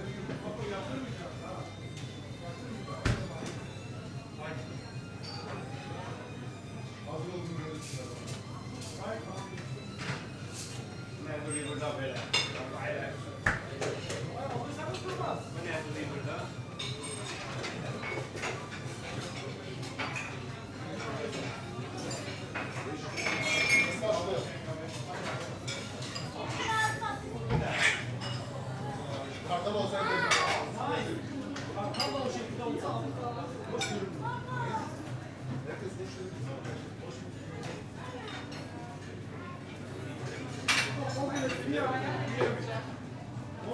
place revisited, 1 soup, 1 Lahmacun, as good as always...
(Sony PCM D50, Primo Em172)